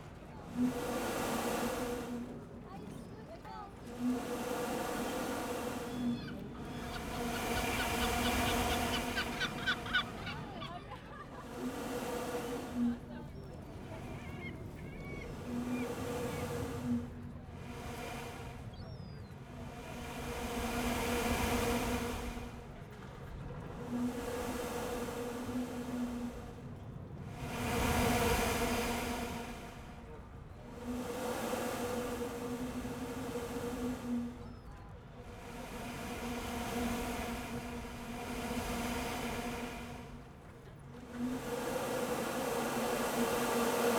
Cais do Sodré, Lisboa, Portugal - Vent pipes on peer
Air pushed through a vent pipe by the waves under the new pedestrian peer in Cais Do Sodre, Lisbon, Portugal. Background sounds of sea gulls, engine, people chatting. Originally, I thought it was a sound art piece as there are many of these vents singing on the peer, but Ive seen similar structures elsewhere. Recorded with a Zoom H5 with the standard XYH-5 head. Slight low frequency cut to remove excess wind noise and very light mastering.
12 February 2017